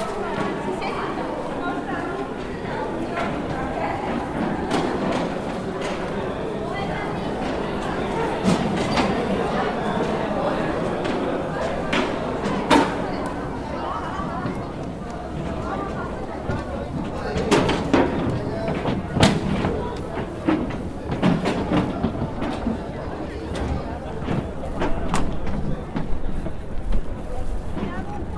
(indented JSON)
{
  "title": "beijing, forbidden city",
  "date": "2010-04-07 16:34:00",
  "description": "forbidden_city, leaving, people",
  "latitude": "39.91",
  "longitude": "116.39",
  "altitude": "53",
  "timezone": "Asia/Shanghai"
}